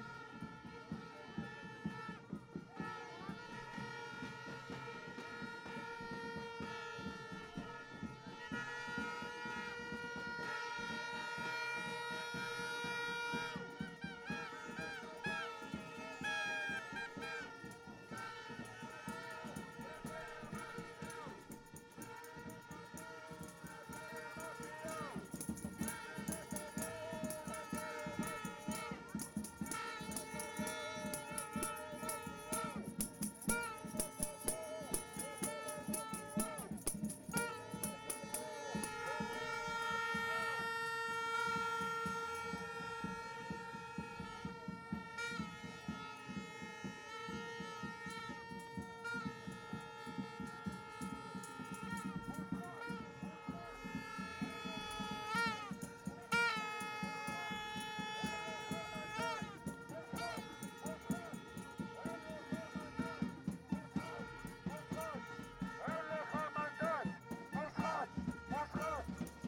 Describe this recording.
Tens of thousands of demonstrators gathered for a final protest, 3 days before the election, in front of the official residence of the corrupt Israeli Prime Minister, Netanyahu. A demonstration that marks 9 consecutive months of popular protest across the country that led to the overthrow of the government. The demonstrators are demanding a change of government, the preservation of democracy and the prosecution of Netanyahu for bribery, fraud and breach of trust.